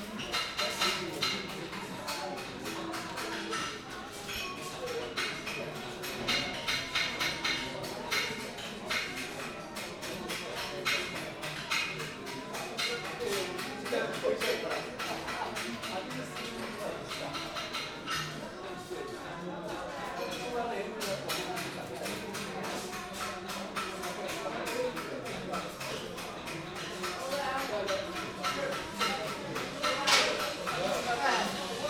Derb Anbou, Marrakech, Marokko - metal workers
Lot of small workshops where they work with metal. Recorded with Sony PCM D-100 with built-in microphones
Marrakech, Morocco